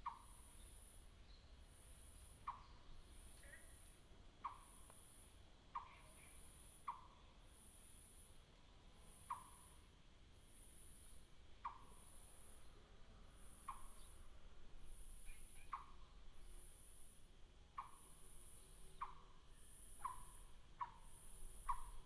北113東眼產業道路, Sanxia Dist., New Taipei City - birds
traffic sound, birds sound, frog